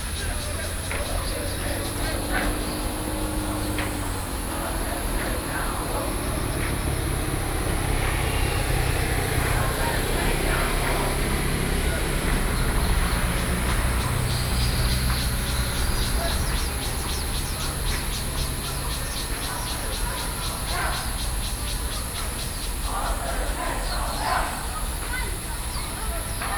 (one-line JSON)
{"title": "wugu, New Taipei City - Soldiers running cries", "date": "2012-07-03 17:42:00", "latitude": "25.08", "longitude": "121.43", "timezone": "Asia/Taipei"}